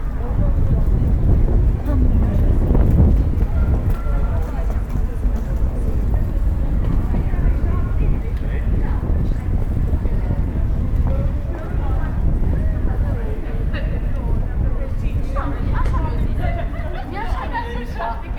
At the the Piata Muzeuli on a warm and sunny spring day. The last sounds of the church bell and people on the street talking in different languages. Nearby a small market with people selling traditional first of march flowers.
soundmap Cluj- topographic field recordings and social ambiences
Cluj-Napoca, Romania